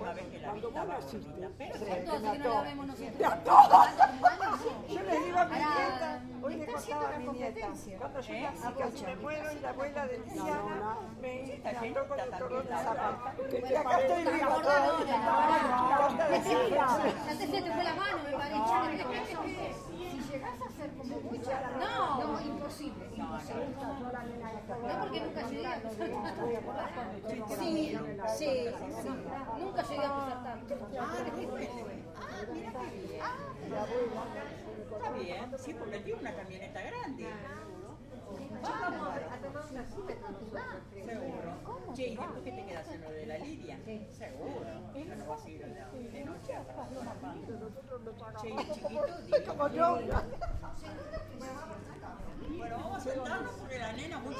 Club de la Fuerza Aerea, Montevideo, Uruguay - lisiane cumple 80
It´s Lisianes 80st birthday her guests are arriving.
28 March 2011